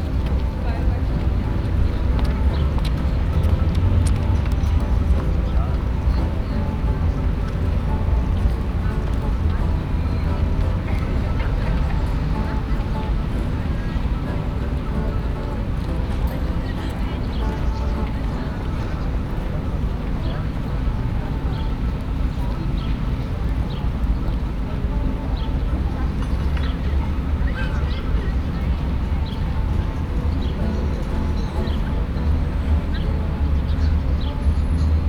Kassel Friedrichsplatz
sitting on the stairs of the Friederichsplatz in the sun. ZoomH4 + OKM binaural mics